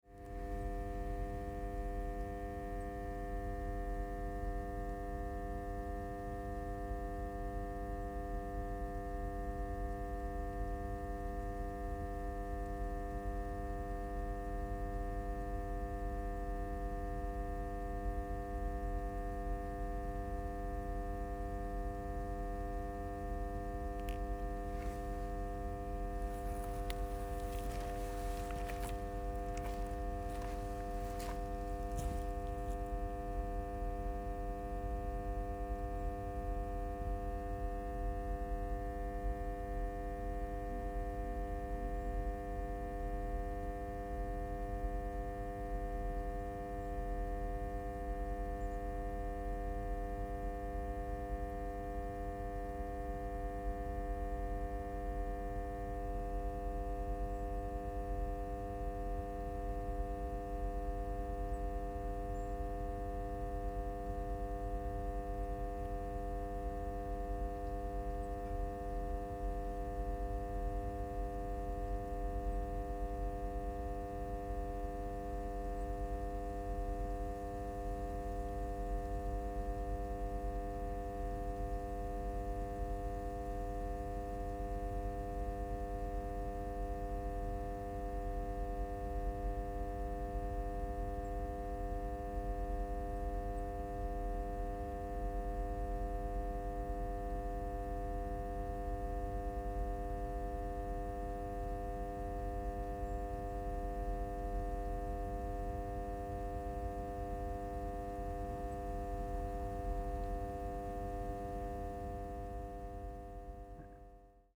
Weißwasser, Germany - Transformer house 1 - electrical hum
The electricity drives water pumps that keep the mine from flooding.
Weißwasser/Oberlausitz, Germany, October 2016